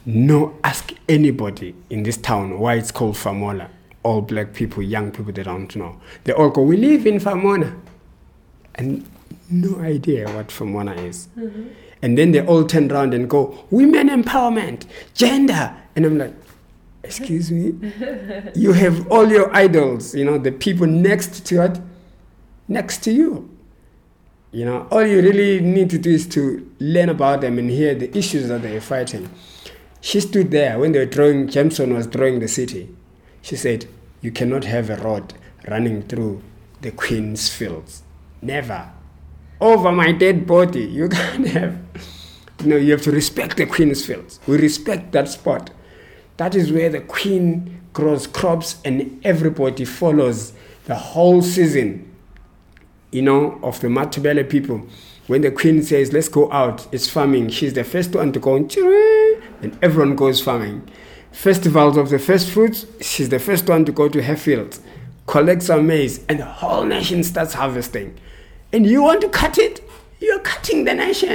Amakhosi Cultural Centre, Makokoba, Bulawayo, Zimbabwe - Unknown heroes...
… going back in history, again hardly anyone knows the fascinating stories, Cont continues… such as these of women heroes …
29 October 2012